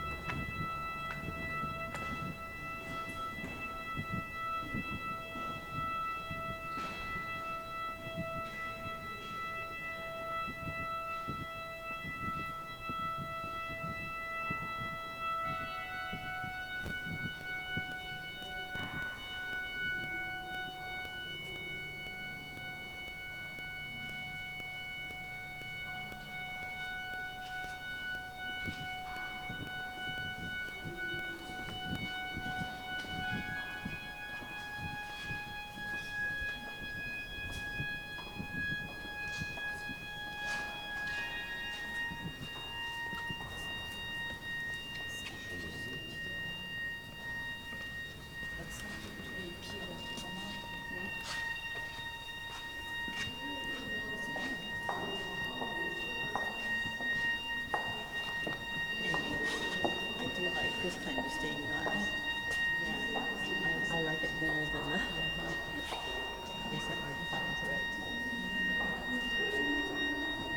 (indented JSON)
{
  "title": "Ghent, Belgium - Sint-Baafs organ tuning Tuesday 30 June 2015",
  "date": "2015-06-30 13:32:00",
  "description": "walking into St. Baafskathedraal while the big organ is being tuned. listening to small snippets of conversation in various languages. then walking out.",
  "latitude": "51.05",
  "longitude": "3.73",
  "altitude": "17",
  "timezone": "Europe/Brussels"
}